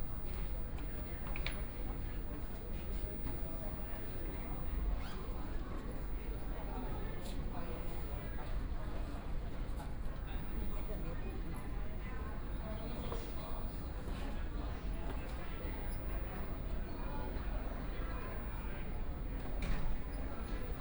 Terminal II, München-Flughafen - Airport lobby
Airport lobby, A lot of tourists, Footsteps